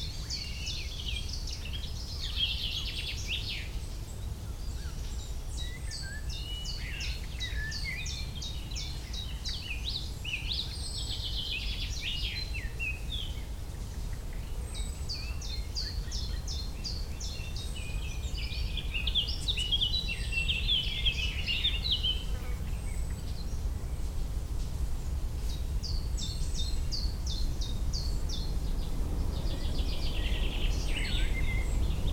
Pyrimont, France - Living forest
Living sound of the forest, with a lot of blackbirds talking between them, a few planes and a few sounds from the nearby village. The forest in this place is an inextricable coppice !